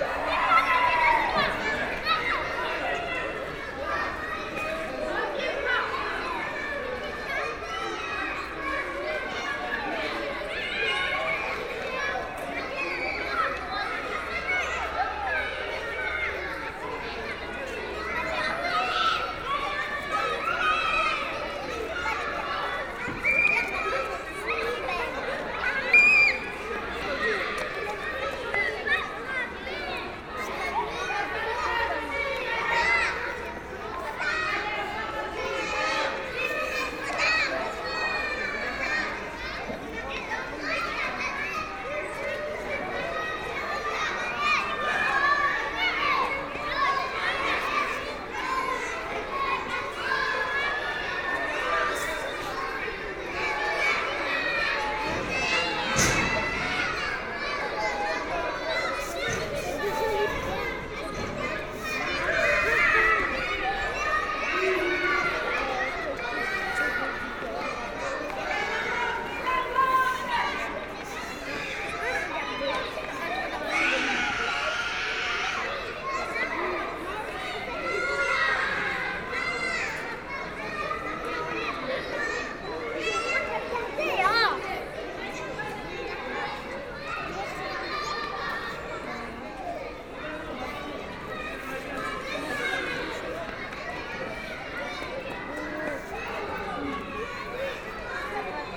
Porte Saint-Denis, Paris, France - Children in a playground
In the Jardin Saint-Lazare school, young children are playing in a large playground during the lunchtime.
2 May 2017, 13:15